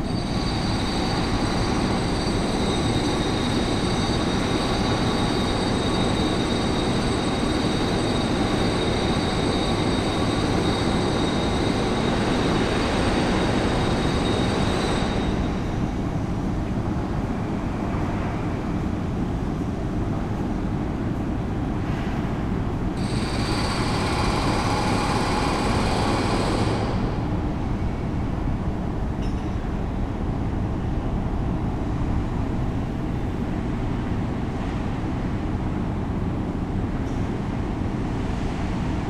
Charleroi, Belgium - Industrial soundscape
Industrial soundscape near the Thy-Marcinelle wire-drawing plant. A worker unload metal scrap from a boat, and another worker is destroying a wall with an horrible drill. Not a very relaxing sound...